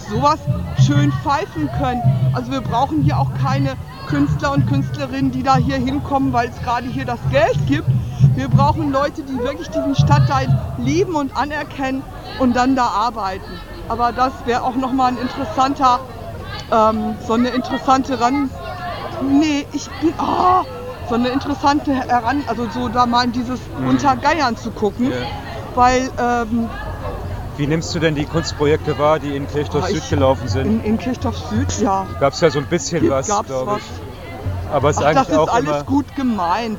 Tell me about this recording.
Warum der Neubau Wilhelmsburg zerschneidet. Die Autobahn als soziale Frage.